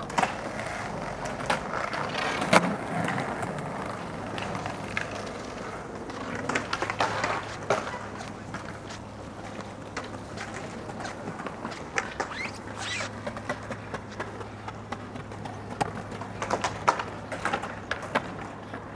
Prague, Letna Park, the Metronome
The Metronome in Letna Park is a topsy-turvy pendulum, enthroned over and giving a pace to the city. When in this place they started blowing up a large row of soviet leader statues, one at a time, the free space was quickly seized by skateboarding youth. And the Metronome goes swinging on and on.